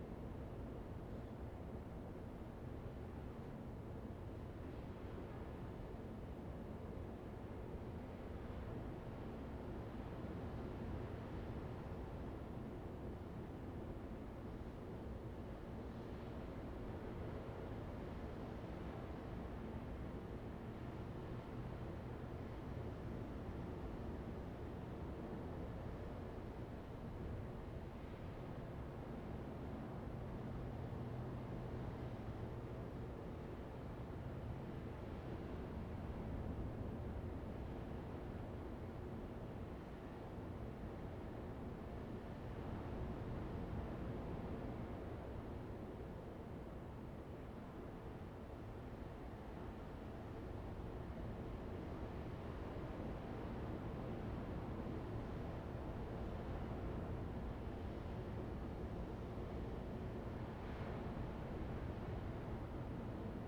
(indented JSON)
{
  "title": "Jizanmilek, Koto island - In a tunnel",
  "date": "2014-10-29 12:55:00",
  "description": "In a tunnel, inside the cave\nZoom H2n MS+XY",
  "latitude": "22.06",
  "longitude": "121.57",
  "altitude": "52",
  "timezone": "Asia/Taipei"
}